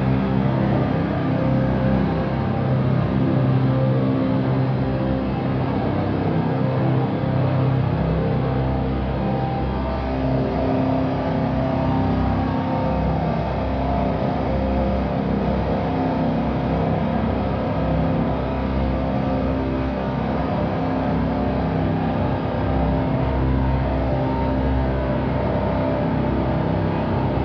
Venedig, Italien - Venice Biennale - Australian Pavillion
At the Venice Biennale 2022 inside the Australian Pavillion - the sound of a a live performed guitar drone. The work DESASTRES is an experimental noise project that synchronises sound with image. The work takes the form of a durational solo performance as installation. Marco Fusinato will be performing during the opening hours of the Biennale – a total of two hundred days. Fusinato will perform live in the Pavilion using an electric guitar as a signal generator into mass amplification to improvise slabs of noise, saturated feedback, and discordant intensities that trigger a deluge of images onto a freestanding floor-to-ceiling LED wall.
international ambiences
soundscapes and art enviroments
Veneto, Italia